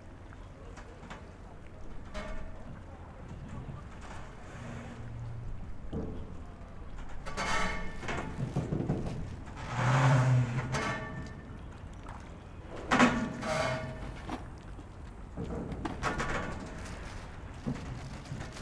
Oscillating metallic harbor 2900 Setúbal, Portugal - Oscillating metallic bridge
Oscillating metallic harbor for servicing boats that cross the canal to Tróia from Setúbal. Fisherman, voices, waves and radio nearby. Recorded with a Zoom H5 and XLS6 capsule.
24 July